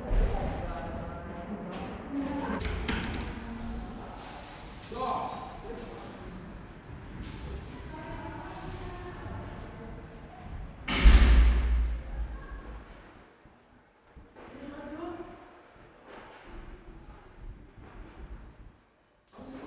Borbeck - Mitte, Essen, Deutschland - essen, traugott weise school, foyer
In der Traugott Weise Schule einer Förderschule mit dem Schwerpunkt geistige Entwicklung - im Eingangsbereich. Der Klang der Schritte und Stimmen von Lehrern und Kindern.
Inside the Traugott Weise school at the foyer. The sound of voices and steps of pupils and teachers.
Projekt - Stadtklang//: Hörorte - topographic field recordings and social ambiences